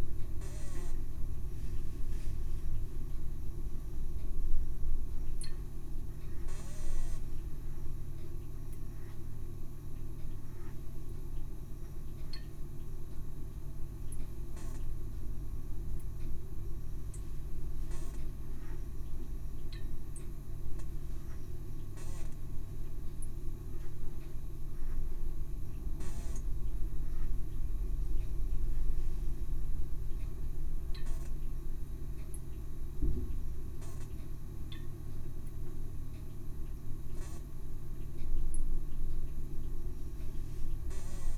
Part 3 This is a 2 hour 30 min recording in 3 parts.
The water filter is protagonist with squealing tight throat to lush fat, sonority, while the ensemble ebbs and flows in this rich, bizarre improvisation: the grandfather clock measures; the pressure cooker hisses and sighs; the wind gathers pace to gust and rage; vehicles pass with heavy vibration; the Dunnock attempts song from the rambling rose; the thermostat triggers the freezer’s hum; children burst free to the playground; a boy-racer fancies his speed; rain lashes and funnels from the roof; a plastic bag taunts from its peg on the line, as the wind continues to wuther.
Capturing and filtering rain water for drinking is an improvement on the quality of tap water.